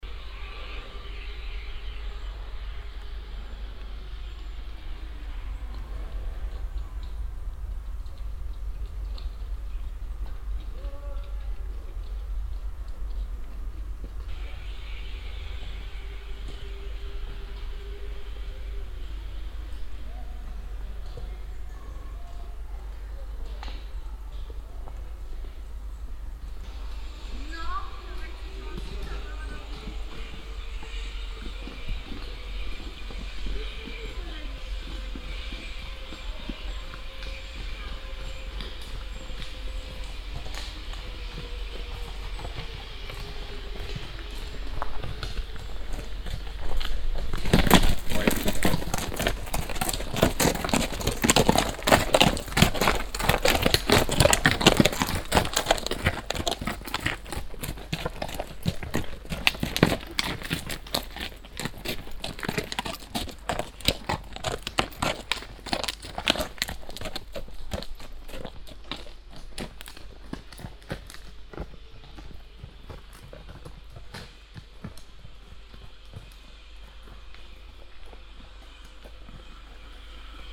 vianden, adventurepark, indian forest
Walking in the forest. Following a strange sound that is effected by people that glide downhill though the trees attached on steel ropes. Finally meeting a group of three horse riders that pass by. A generator noise in the distance.
Vianden, Abenteuerpark, Indian Forest
Spaziergang im Wald. Einem sonderbaren Geräusch folgend, das von Menschen verursacht wird, die an Stahlseilen abwärts durch die Bäume gleiten. Schließlich eine Begegnung mit drei Reitern. Das Gräusch eines Genrators in der Ferne.
Vianden, parc d'aventure, forêt indienne
Marche en forêt. À la suite d’un son étrange fait par des gens qui glissent en descendant à travers les arbres attachés à des cordes métalliques. Enfin, rencontre avec un groupe de trois cavaliers qui passent. Le bruit d’un générateur dans le lointain
Project - Klangraum Our - topographic field recordings, sound objects and social ambiences
Vianden, Luxembourg